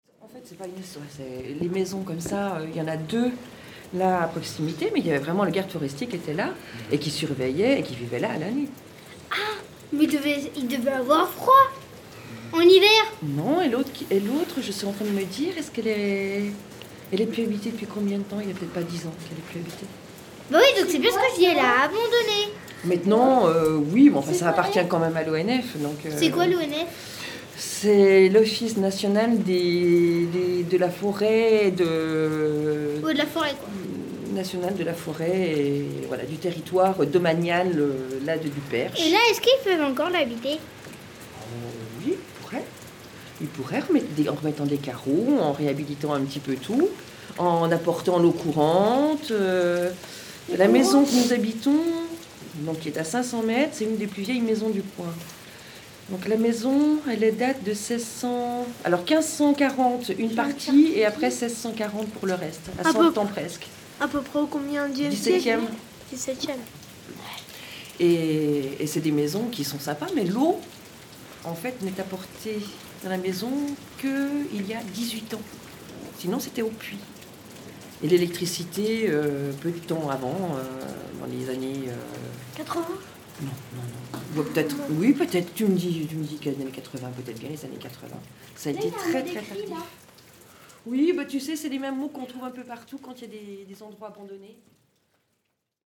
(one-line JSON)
{"title": "Randonnai, France - Etoile du Perche maison forestière", "date": "2014-02-13 15:45:00", "description": "Discussion sur la maison forestière de l'étoile du Perche, Zoom H6", "latitude": "48.62", "longitude": "0.65", "altitude": "302", "timezone": "Europe/Paris"}